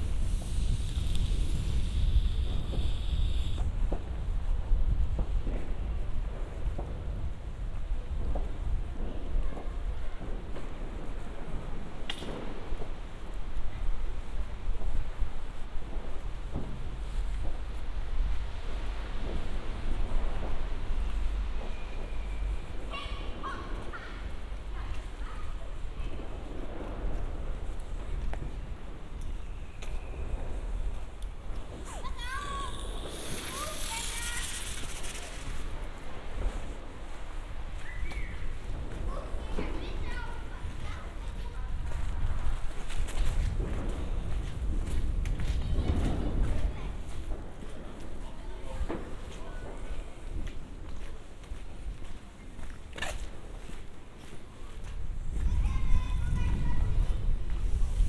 Walking in the streets of Friedenau on New Year Eve, fireworks have already started here and there, few people in the streets, angry policeman (Roland R-07+CS-10EM)
31 December, 22:30